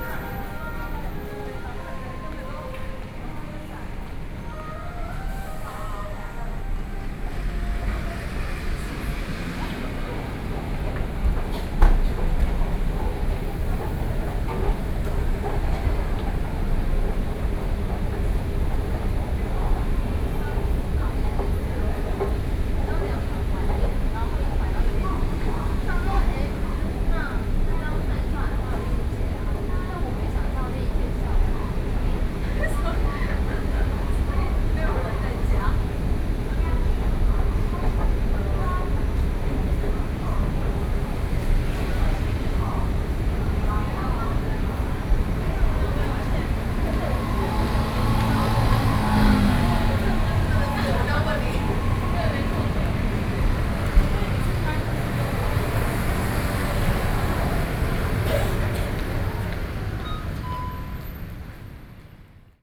Saint Ignatius High School Station, Luzhou District - walking in the MRT station
walking in the MRT station
Binaural recordings, Sony PCM D50 + Soundman OKM II